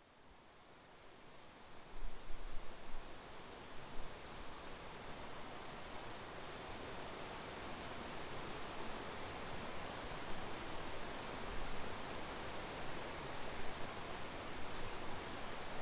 Tel-Hy Nature Preserve, Huntington County, IN, USA - Wabash River, Tel-Hy Nature Preserve, Huntington County, IN 46750, USA
Wabash River, Tel-Hy Nature Preserve, Huntington County, IN. Recorded at an Arts in the Parks Soundscape workshop sponsored by the Indiana Arts Commission and the Indiana Department of Natural Resources.